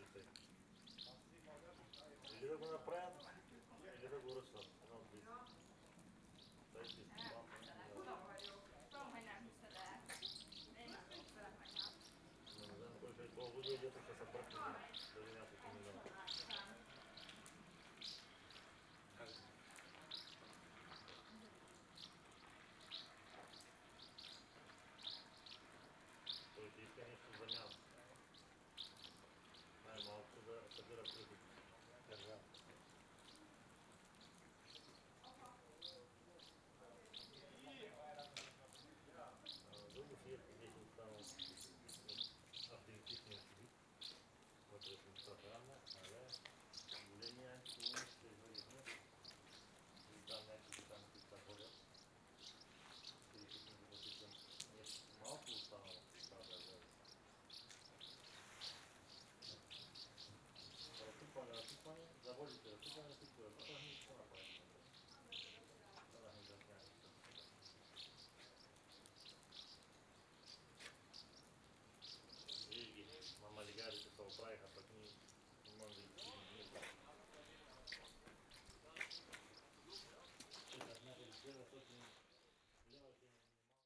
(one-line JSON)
{"title": "Buzludzha, Bulgaria, Drone - In front of Buzludzha - monologue binaural", "date": "2019-07-15 15:47:00", "description": "A security man is reasoning on Bulgarian about the building and the state of the society in Bulgarian. The swallows are singing, some cars in the background of austrian tourists... this recording is made more or less at the same time like the other one with binoural in ear microphones...", "latitude": "42.74", "longitude": "25.39", "timezone": "Europe/Sofia"}